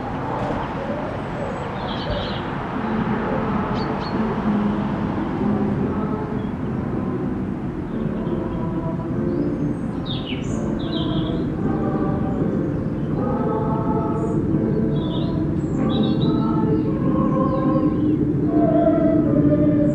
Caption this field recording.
Nestled on the edge of the Chiltern Hills in Woodcote, South Oxfordshire is St Leonard's Church. It is flanked by the Reading and South Stoke Roads, the more distant A4074 and the next door farm. It is the ebb and flow of natural and man-made sounds enveloping the congregational singing that made this section of the recording stand out.